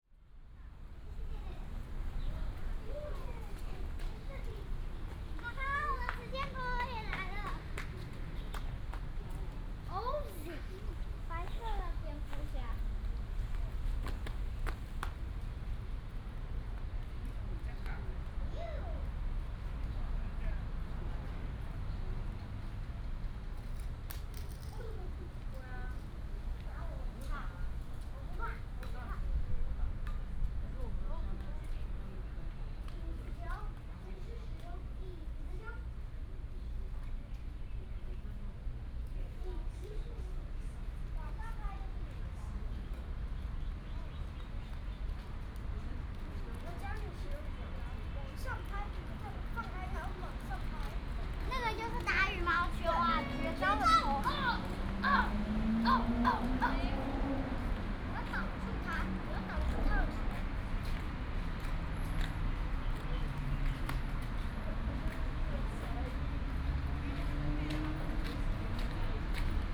新瓦屋客家文化保存區, Zhubei City - In the small square
In the small square, Traffic sound, sound of the birds, Child, Footsteps
Hsinchu County, Taiwan, 7 May 2017